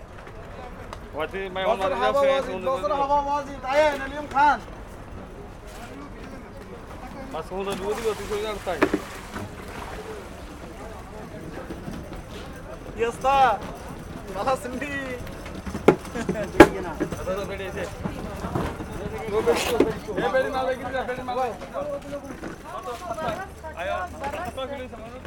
Hidd, Bahreïn - Marché aux poissons de Hidd - Bahrain
Fin de journée au marché aux poissons de Hidd - Bahrain
Hidd fish Market - Bahrain